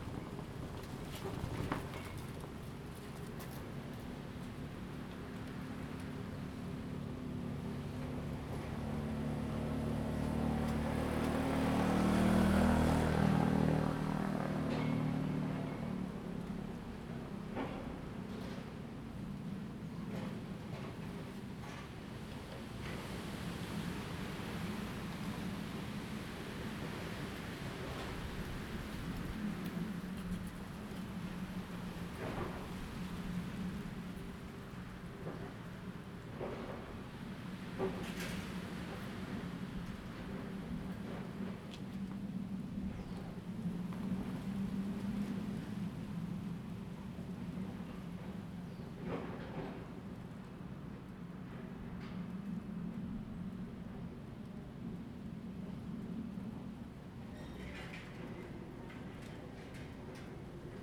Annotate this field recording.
Small village, Roadside, Construction, Zoom H2n MS+XY